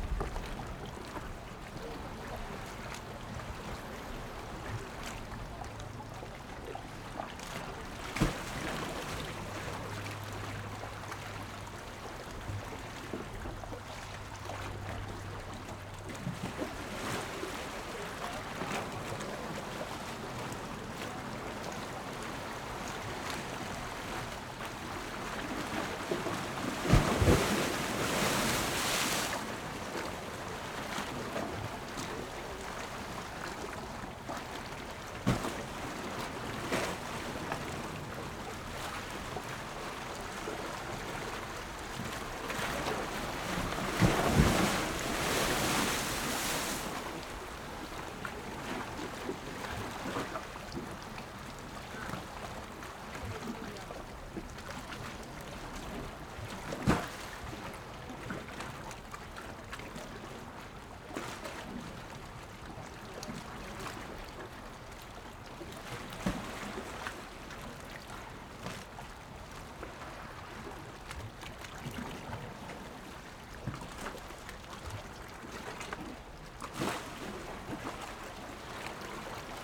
風櫃洞, Magong City - Wave
Wave, Next to the rock cave
Zoom H6 + Rode NT4